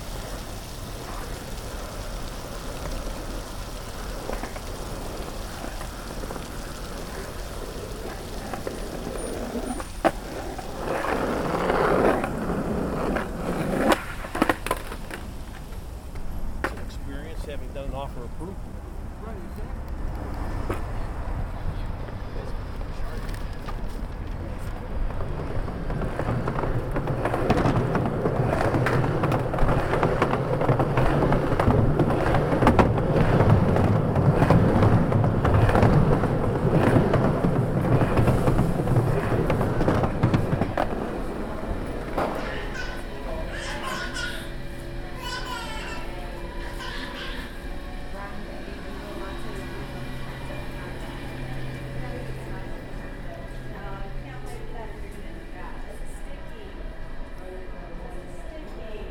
The Loop, Chicago, IL, USA - Skateboarding on Michigan ave.
Wandering down Michigan avenue with my skateboard on a grey Chicago afternoon.
24 November